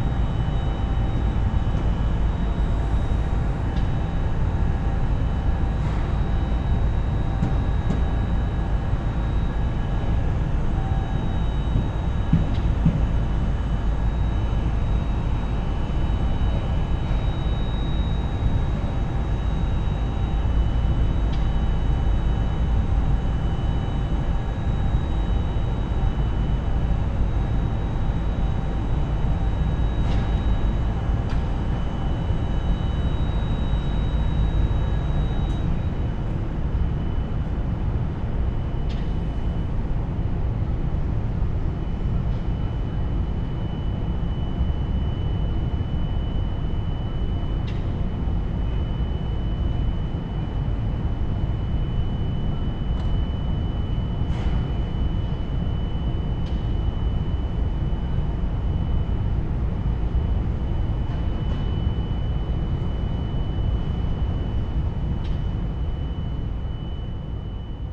Kidricevo, Slovenia - factory complex ambiance
sitting by railway tracks as darkness fell, watching across the way as a tanker truck emptied its contents at an adjacent building.